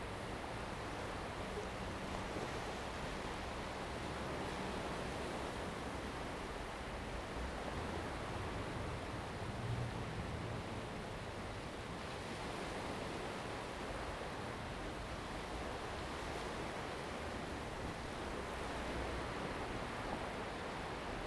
Waves and tides
Zoom H2n MS+XY
2 November 2014, ~18:00